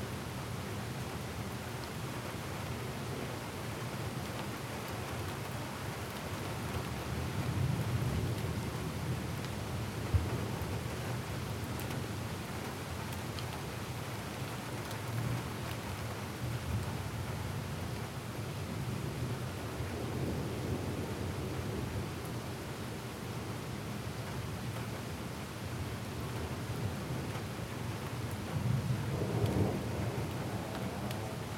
Warwick Blvd, Kansas City, MO, USA - KCMO afternoon thunderstorm
28 August 2018